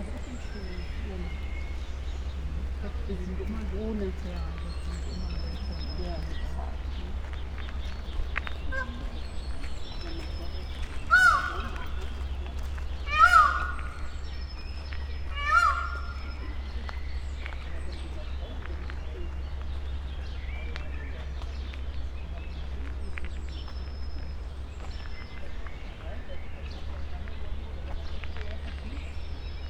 Pfaueninselchaussee, Berlin - caged and free voices
spoken words, peacock screams, wind, bird
Berlin, Deutschland, European Union, 15 May 2013